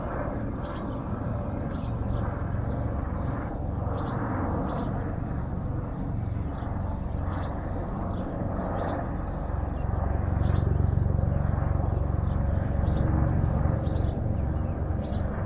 Alsace Corré, Réunion - 20171123 0603-0612 arrivée des hélicos de tourisme CILAOS
20171123_0603-0612 arrivée des hélicos de tourisme CILAOS
Mais là c'est le ballet d'ouverture pour le réveil.
La Réunion, France, 23 November 2017